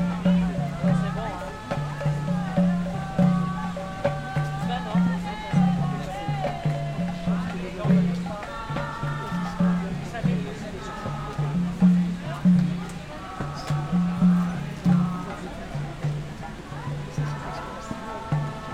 Hare Krishna in the park
Captation : ZOOMH6
Occitanie, France métropolitaine, France, 11 June 2022, 18:00